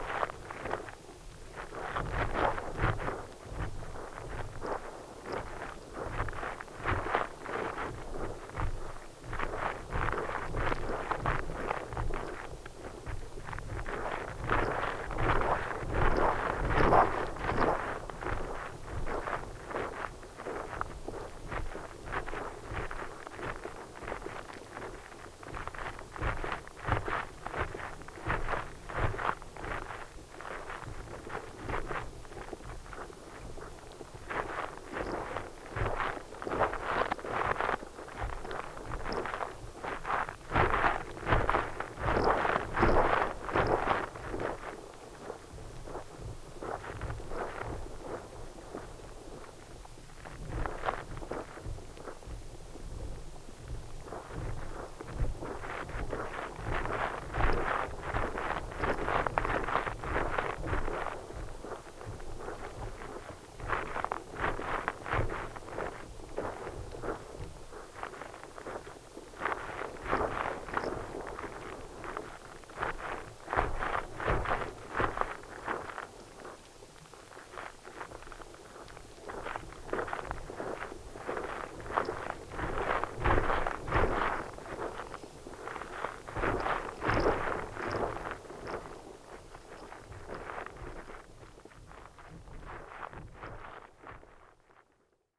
Lithuania, Utena, in the sand of shore
again, Ive placed contact microphones in the sand on the shore to listen what goes on when waves play rhythmically
21 September 2011